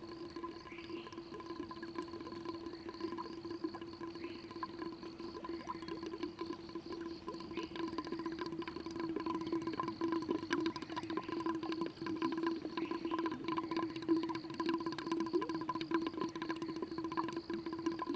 水上巷, 埔里鎮桃米里, Taiwan - Water flow and birds sound
Water flow sound, Bird sounds, Traffic Sound
Zoom H2n MS+XY
Puli Township, 水上巷, 19 April 2016, 05:51